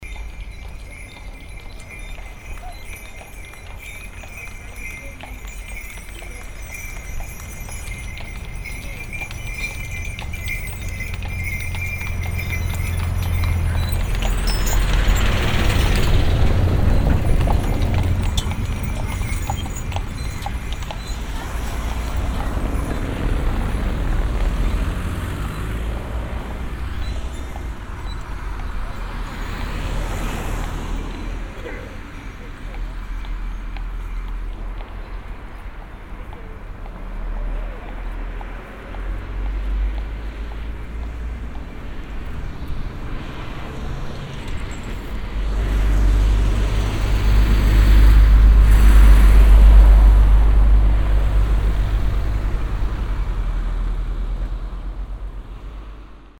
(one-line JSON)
{"title": "lech, arlberg, horse sleigh and traffic", "date": "2011-06-06 11:16:00", "description": "The sound of a horse sleigh with bells coming up the street and passing and the overtaking traffic sounds of cars passing by.\ninternational sound scapes - topographic field recordings and social ambiences", "latitude": "47.21", "longitude": "10.14", "altitude": "1444", "timezone": "Europe/Vienna"}